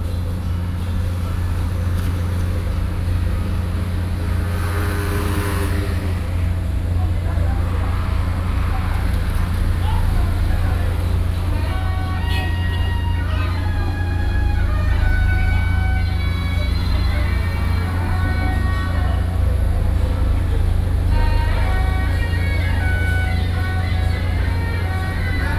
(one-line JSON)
{"title": "Gangxi, Wanli Dist., New Taipei City - Funeral", "date": "2012-06-25 16:38:00", "latitude": "25.20", "longitude": "121.69", "altitude": "12", "timezone": "Asia/Taipei"}